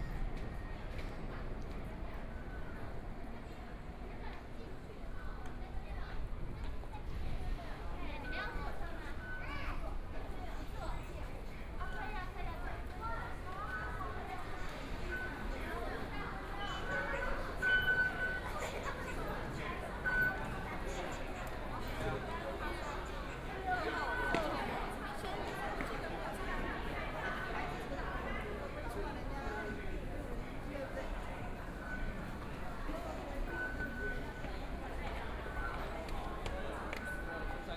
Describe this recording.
walking To MRT, Traffic Sound, Motorcycle Sound, Pedestrians on the road, Aircraft flying through, Binaural recordings, Zoom H4n+ Soundman OKM II